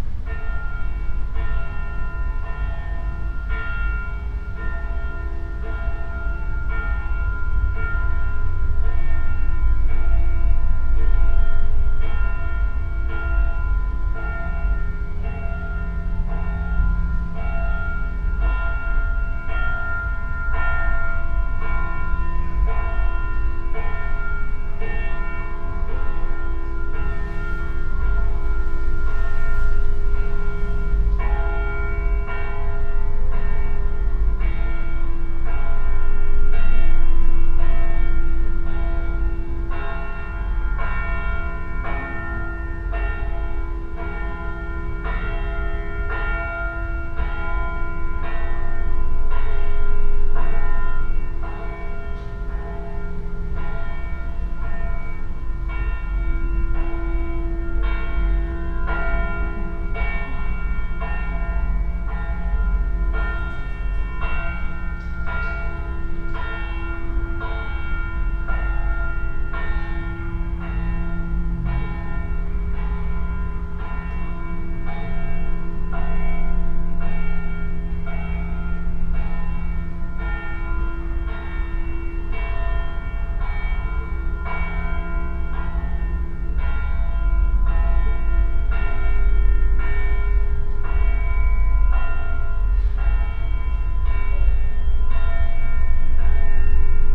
Savinjsko nabrežje, Celje, Slovenia - flood barrier resonance
from within flood barrier fragment at the river bank, church bells
2 November 2013, 17:14